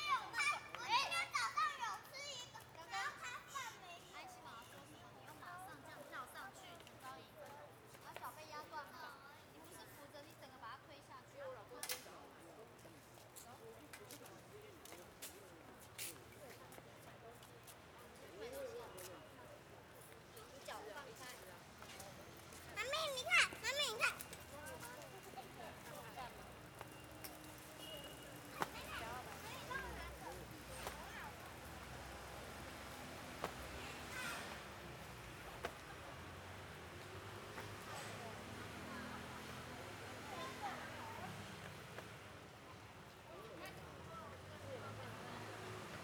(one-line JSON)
{"title": "朱昌公園, Taipei City - Birdsong", "date": "2014-02-17 17:44:00", "description": "Birdsong, in the Park, Traffic Sound, Children's play area\nPlease turn up the volume\nZoom H6 M/S", "latitude": "25.06", "longitude": "121.54", "timezone": "Asia/Taipei"}